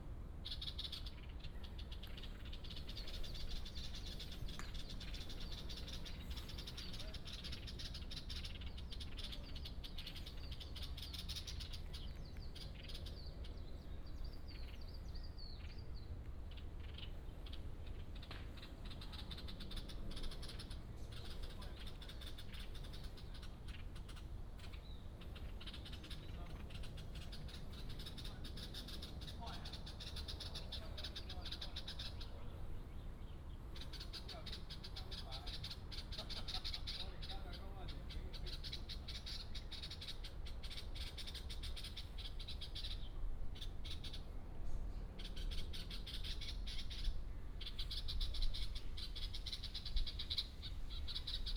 Tongxiao Shrine, Tongxiao Township, Miaoli County - Japanese shrine
Japanese shrine, Birds call